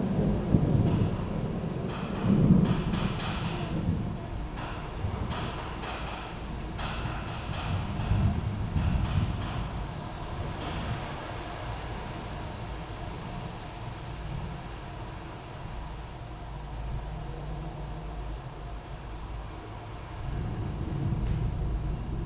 park window - before rain, before lunch
Maribor, Slovenia, June 2014